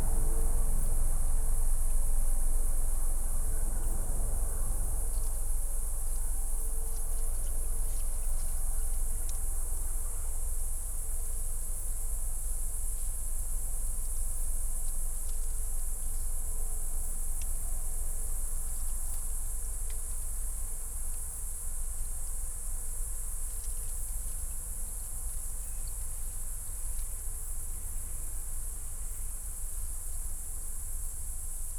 Negast forest, Waldteich, Pond, Rügen - Propellerplane passes over
Small propelled aircraft on a late summer evening